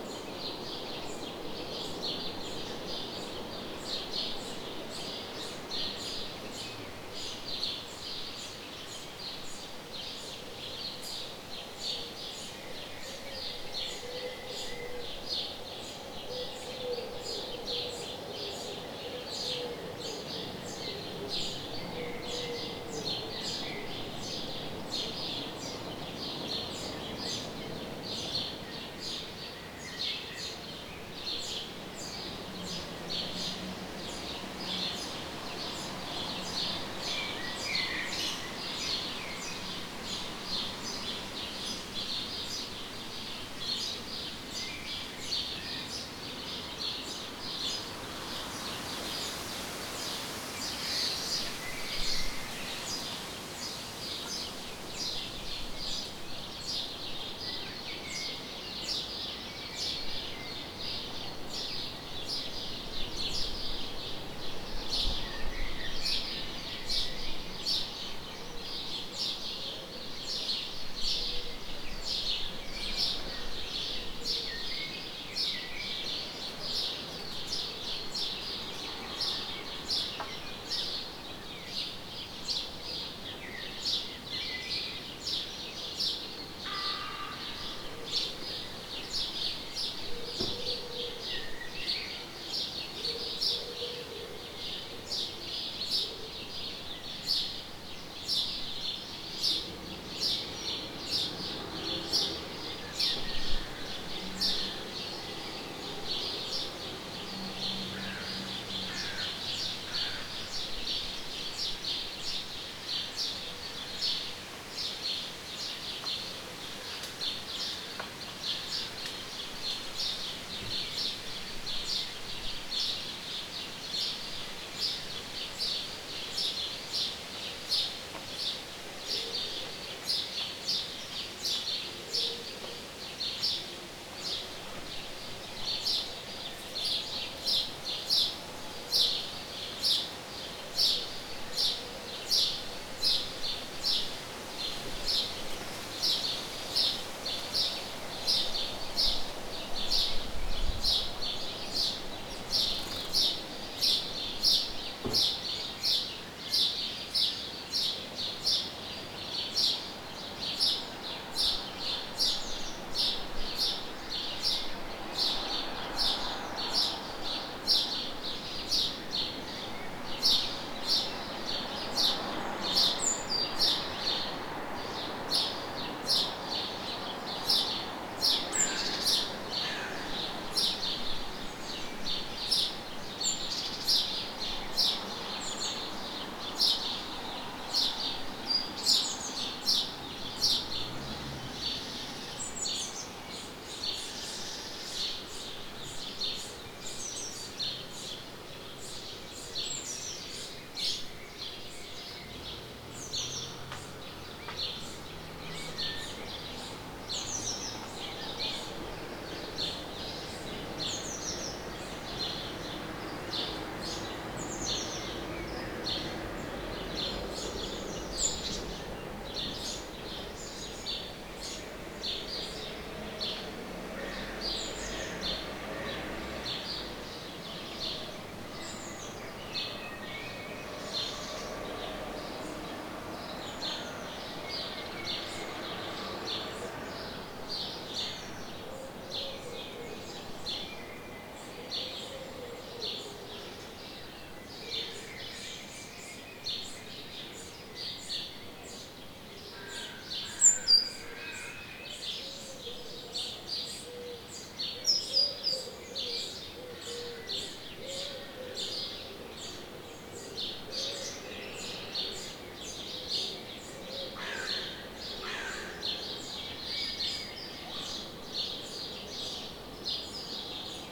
Paul-Lincke-Ufer, Berlin, Deutschland - Morning Birds at Landwehrkanal
Birds and a few humans in an early, summerly Berlin morning. An extended recording with different kinds of birds at different times, sparrows the loudest in the mid part.